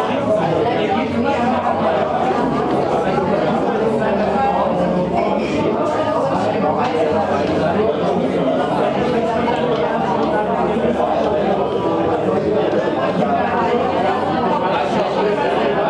Bergmannstraße, Berlin - Guests at the Turandot
People sitting in the "Turandot"; they were having drinks and chatting, they smoked a lot and laughed.